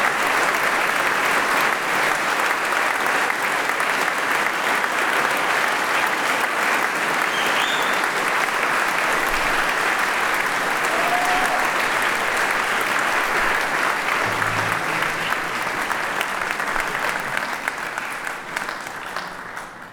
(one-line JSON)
{"title": "Musikwissenschaft, Karl-Schönherr-Straße, Innsbruck, Österreich - Blasmusikkapelle Mariahilf/St. Nikolaus im Canesianum Teil 4", "date": "2018-05-19 21:07:00", "description": "Canesianum Blasmusikkapelle Mariahilf/St. Nikolaus, vogelweide, waltherpark, st. Nikolaus, mariahilf, innsbruck, stadtpotentiale 2017, bird lab, mapping waltherpark realities, kulturverein vogelweide", "latitude": "47.27", "longitude": "11.40", "altitude": "577", "timezone": "Europe/Vienna"}